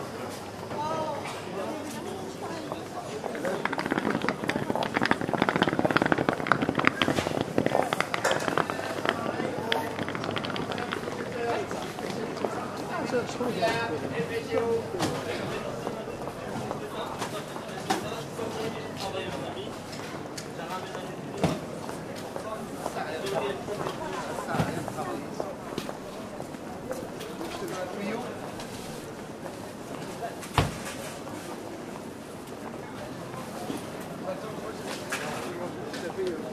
Paris, Rue Montorgueil
Closing time of seafood and fruit shops on Rue Montorgueil. Every business has an end.